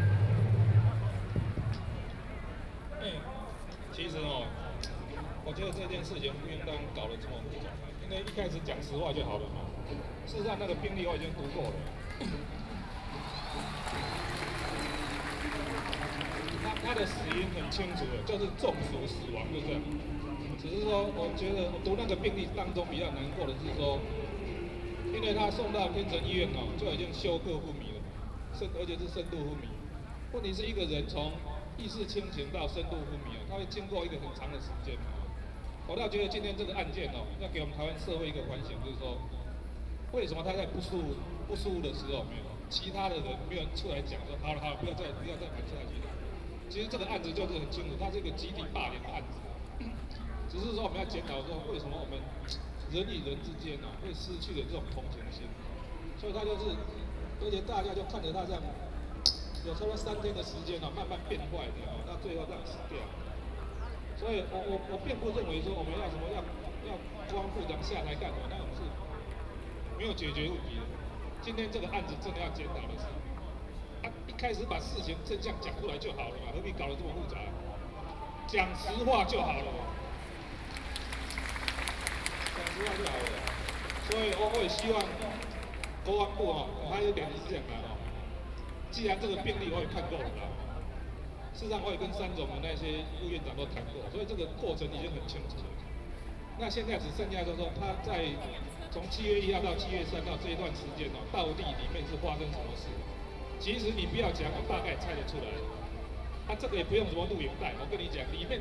Jinan Road, Legislature - speech
Protest party, A young soldier deaths, Zoom H4n+ Soundman OKM II
台北市 (Taipei City), 中華民國